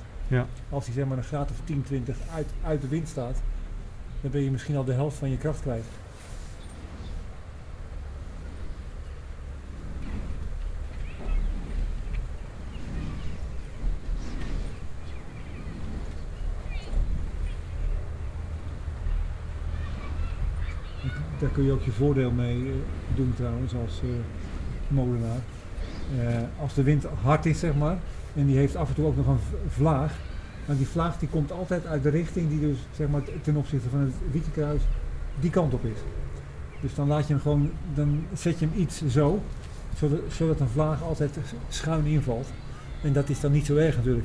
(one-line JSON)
{"title": "zie (en hoor) mij malen in dit stadsgebied", "date": "2011-07-09 17:42:00", "description": "het suizen van de wieken : zie (en hoor) mij malen, zie mij pralen in dit stadsgebied ....\nrustling sound of the turning wicks", "latitude": "52.15", "longitude": "4.44", "altitude": "1", "timezone": "Europe/Amsterdam"}